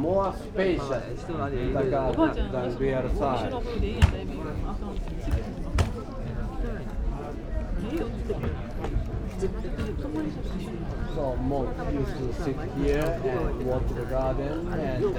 silent landscape garden, Ryoanji, Kyoto - what is there about this garden, synonym for silence